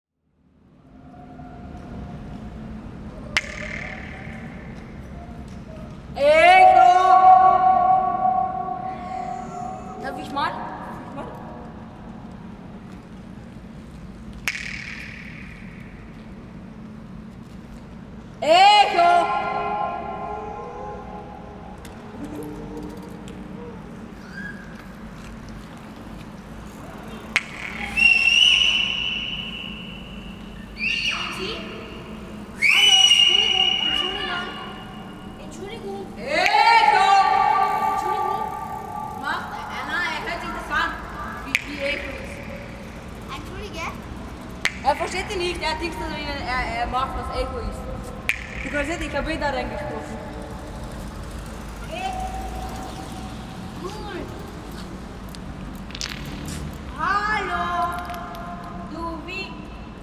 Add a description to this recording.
local kids join me as I record the echoes of the concrete space under the Krieau U-Bahn station